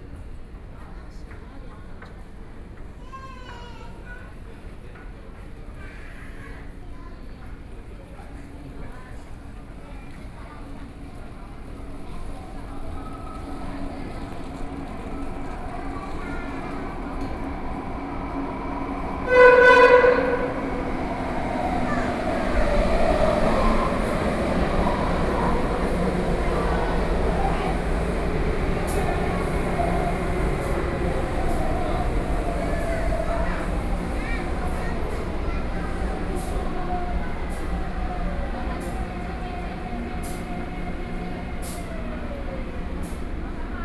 Minquan West Road Station, Taipei City - in the MRT stations